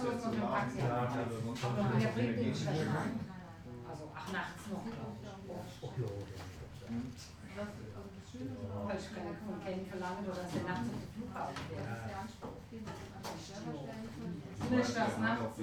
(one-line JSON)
{"title": "niederheimbach: burg sooneck, restaurant - the city, the country & me: pub of sooneck castle", "date": "2010-10-17 15:47:00", "description": "guests talking with the manager of the pub about train connections\nthe city, the country & me: october 17, 2010", "latitude": "50.02", "longitude": "7.83", "altitude": "203", "timezone": "Europe/Berlin"}